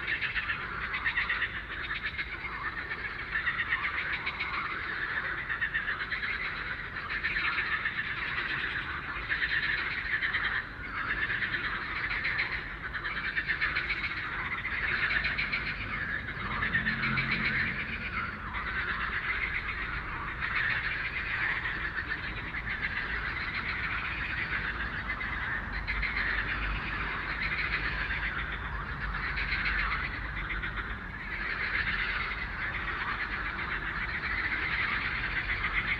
Moeras van Wiels, Luttrebruglaan, Vorst, Belgium - Frog chorus at night
Woodland park with some beautiful and very tall beech trees.